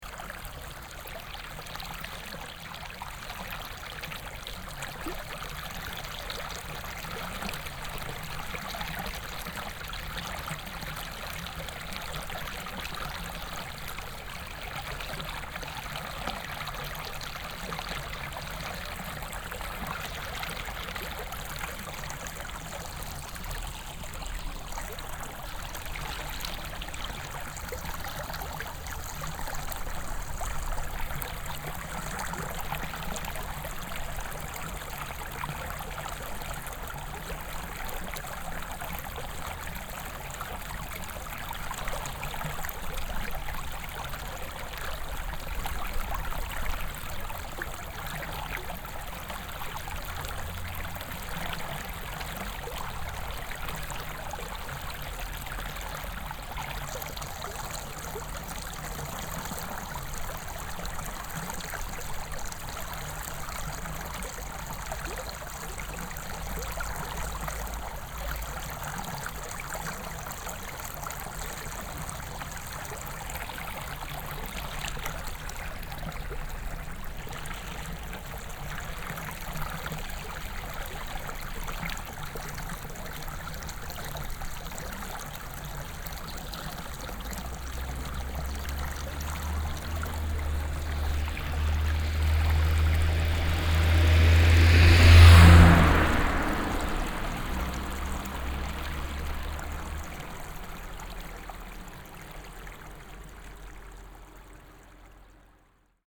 takasaki, kaminakai, rice field
watering of a rice field in the early morning. the water flows from a small channel that runs aside to the street into the field
international ciy scapes - topographic field recordings
22 July